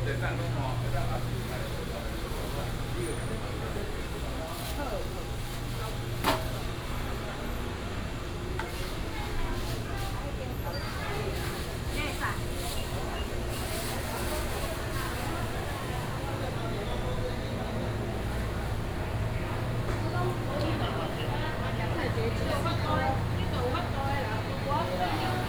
嘉義東市場, Chiayi City - Walking in the very old market
Walking in the very old market, Traffic sound